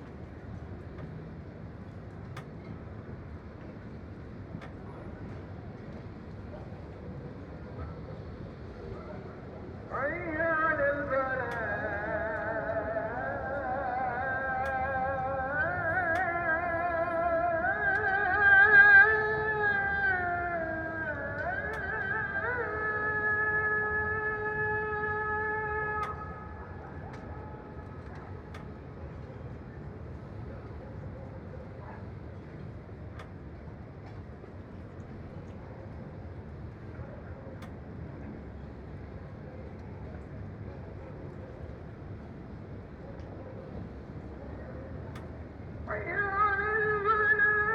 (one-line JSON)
{"title": "Marina Kalkan, Turkey - 915b Muezzin call to prayer (late evening)", "date": "2022-09-21 20:30:00", "description": "Recording of a late evening call to prayer.\nAB stereo recording (17cm) made with Sennheiser MKH 8020 on Sound Devices MixPre-6 II.", "latitude": "36.26", "longitude": "29.41", "altitude": "6", "timezone": "Europe/Istanbul"}